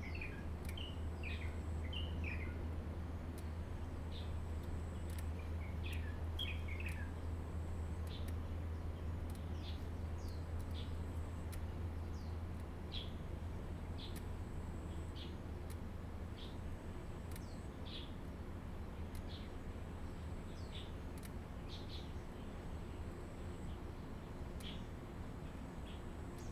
富世村, Sioulin Township - Small village
In the woods, Cicadas sound, Birdsong sound, The weather is very hot, Small village, Noise from nearby factories
Zoom H2n MS+XY
Sioulin Township, 花4鄉道, 27 August 2014